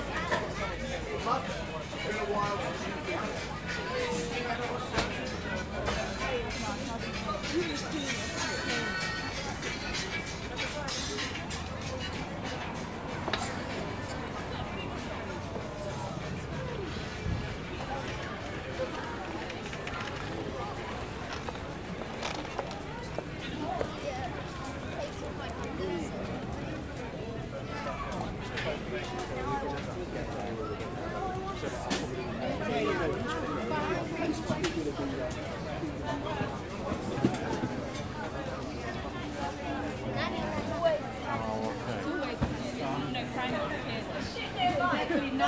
Real Food Market, Southbank, London, UK - Real Food Christmas Market
Walking around the food market.
Zoom H4n